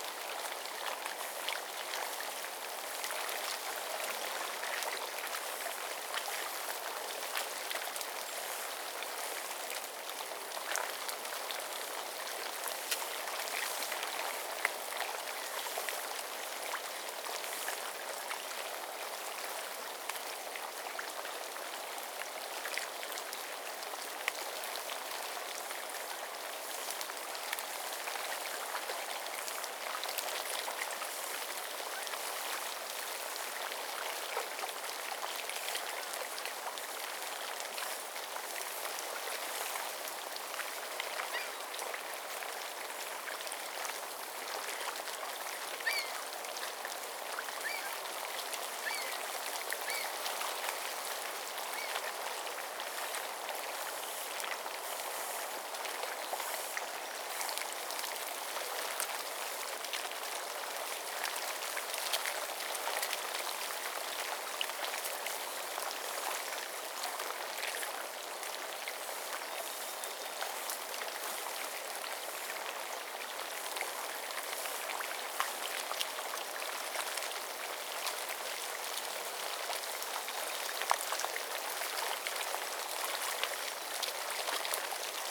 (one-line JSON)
{"title": "Ottawa River, Gatineau, QC, Canada - Ottawa River, end of winter", "date": "2016-04-05 10:00:00", "description": "Ottawa River with many ice crystals sloshing about along the shore. Also robins and other birds singing. Zoom H2n with highpass filter post-processing.", "latitude": "45.41", "longitude": "-75.77", "altitude": "56", "timezone": "America/Toronto"}